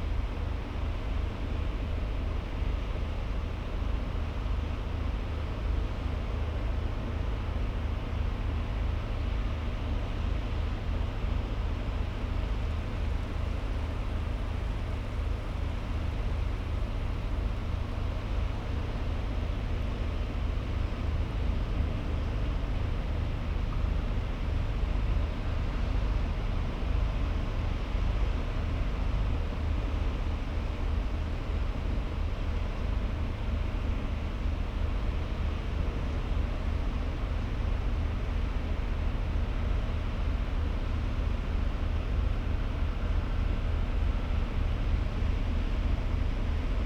Henrietta St, Whitby, UK - under the east cliff ... incoming tide ...
under the east cliff ... incoming tide ... lavalier mics clipped to bag ... bird calls from ... fulmar ... herring gull ... lesser-blacked back gull ... rock pipit ... sandwich tern ... coast guard helicopter whirrs by ... a school party wander across the beach ...
17 May 2019, 10:30